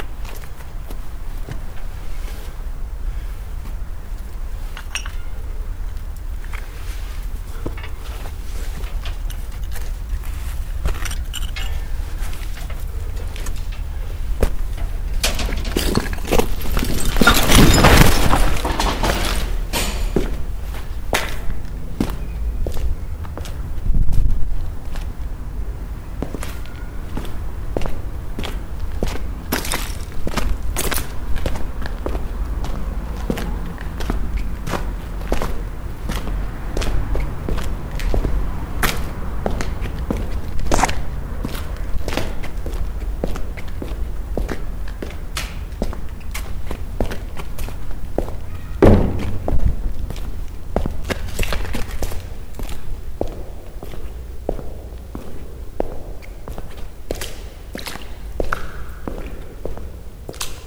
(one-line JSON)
{"title": "SKAM Mojo, Bowlingbahn", "date": "2009-11-01 10:45:00", "description": "Aus der Serie \"Immobilien & Verbrechen\": Abriss in Progress - Was ist von den Künstlerateliers und dem beliebten Club geblieben?\nKeywords: Gentrifizierung, St. Pauli, SKAM, Mojo, Tanzende Türme, Teherani, Strabag, Züblin", "latitude": "53.55", "longitude": "9.97", "altitude": "24", "timezone": "Europe/Berlin"}